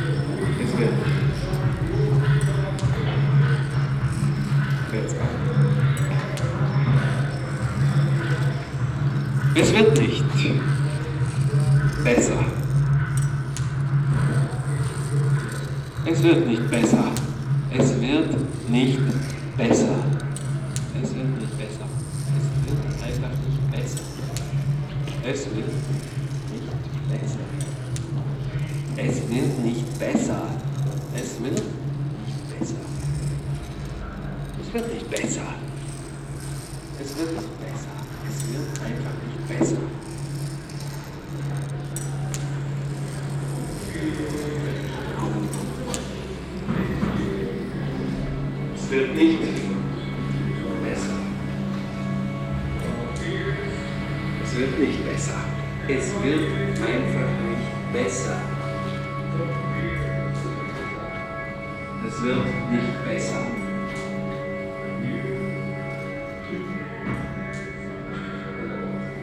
Altstadt-Nord, Köln, Deutschland - Cologne, Museum Ludwig, machines by Andreas Fischer
Inside the museum in the basement area - during an exhibition of sound machines by artist Andreas Fischer. Here a machine on a long pole, with a rifle, a speaker horn, and a mechanism that moves the bowls of a mala. In the backgound the sound of other machines and visitors.
soundmap nrw - social ambiences, topographic field recordings and art places
Cologne, Germany, 26 December 2012, ~14:00